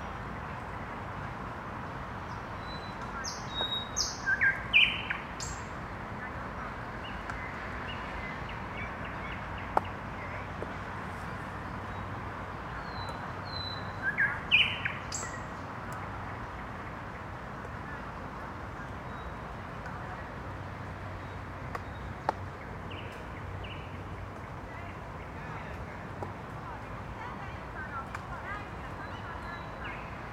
{"title": "night bird sounds in park, Helsinki", "date": "2011-06-12 00:40:00", "description": "recorded during the emporal soundings workshop, Helsinki", "latitude": "60.18", "longitude": "24.91", "timezone": "Europe/Helsinki"}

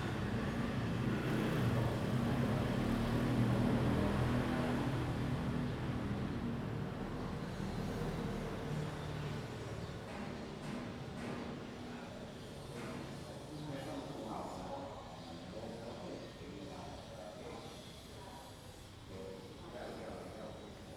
{"title": "赤崁村龍德宮, Baisha Township - in the temple", "date": "2014-10-22 11:43:00", "description": "In the square, in the temple\nZoom H2n MS+XY", "latitude": "23.67", "longitude": "119.60", "altitude": "11", "timezone": "Asia/Taipei"}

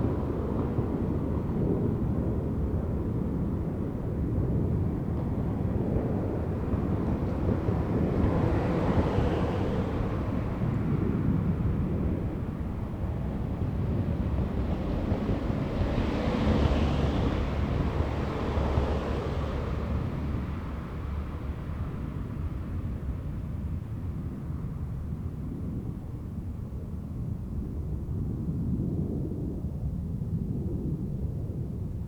{"title": "mainz-gonsenheim, weserstraße: garten - the city, the country & me: garden", "date": "2010-10-15 22:53:00", "description": "cars passing over bumps\nthe city, the country & me: october 15, 2010", "latitude": "50.00", "longitude": "8.22", "altitude": "123", "timezone": "Europe/Berlin"}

